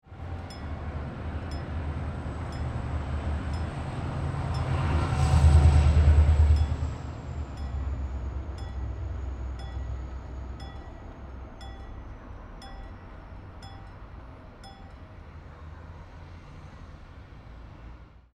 Rijeka, Croatia, Electro, Diesel - Electro, Diesel